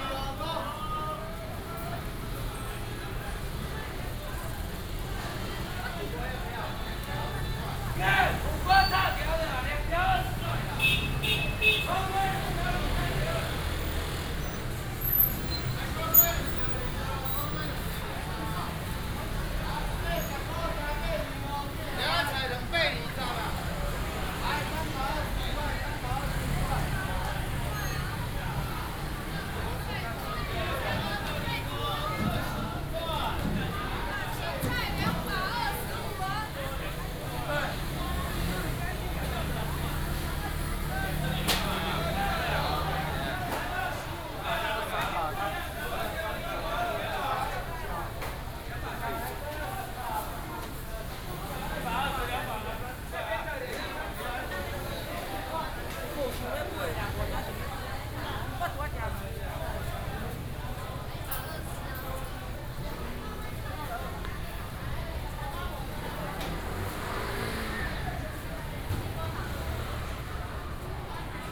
新竹果菜批發市場, Hsinchu City - wholesale market
Vegetable and fruit wholesale market